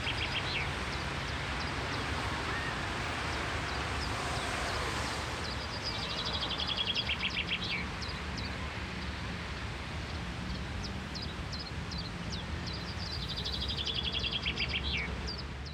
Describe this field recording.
Nauener Platz in Berlin was recently remodeled and reconstructed by urban planners and acousticians in order to improve its ambiance – with special regard to its sonic properties. One of the outcomes of this project are several “ear benches” with integrated speakers to listen to ocean surf or birdsong.